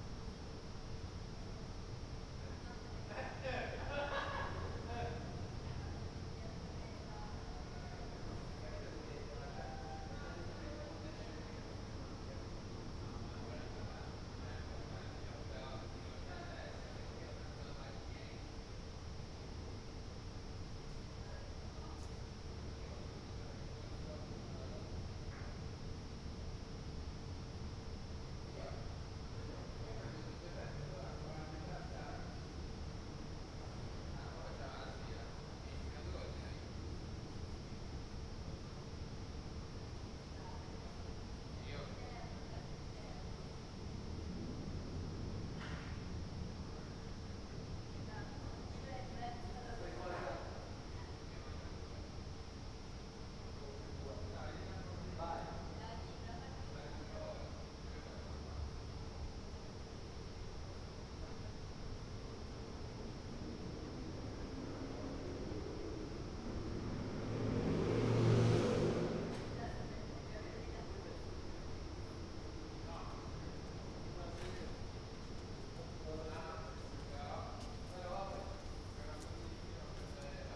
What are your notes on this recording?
"Round midnight last day of students college opening in the time of COVID19" Soundscape, Chapter CXXI of Ascolto il tuo cuore, città. I listen to your heart, city, Saturday, August 1st, 2020, four months and twenty-one days after the first soundwalk (March 10th) during the night of closure by the law of all the public places due to the epidemic of COVID19. Start at 00:28 a.m. end at 01:06 a.m. duration of recording 38’23”, The students college (Collegio Universitario Renato Einaudi) close on this day for summer vacation. Go to following similar situation, Chapter CXXII, first day of college closing.